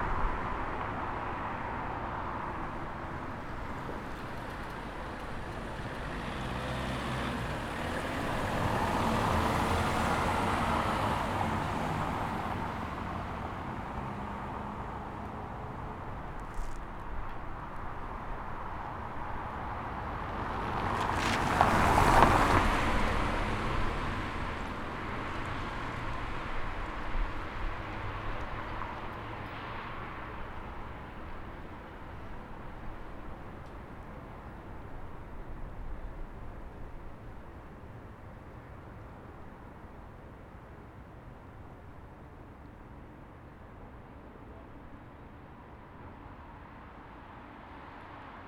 Mercuriusweg. Brinckhorst - Mercuriusweg ground
Mercuriusweg ground. Brinckhorst sound mapping group project.